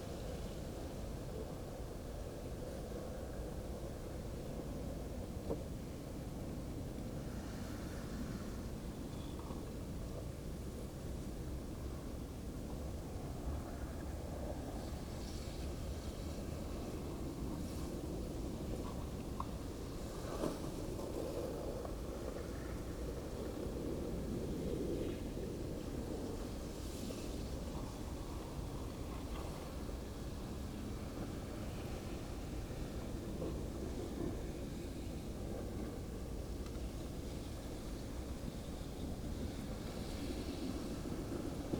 hohensaaten/oder: groyne - the city, the country & me: drift ice
oder river freezing over, pieces of ice mutually crushing each other
the city, the country & me: january 4, 2016
Bad Freienwalde (Oder), Germany, 4 January 2016